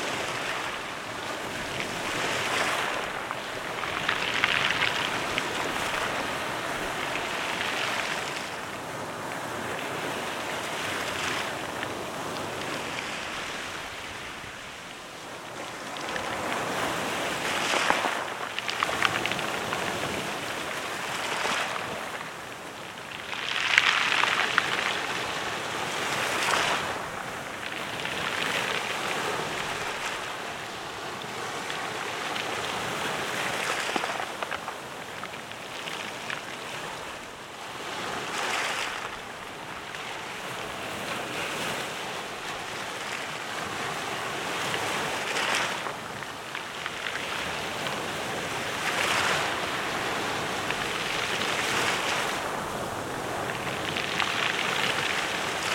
Sentier du littoral par Ault, Bd Circulaire, Ault, France - Ault
Ault (Département de la Somme)
Ambiance au flanc des falaises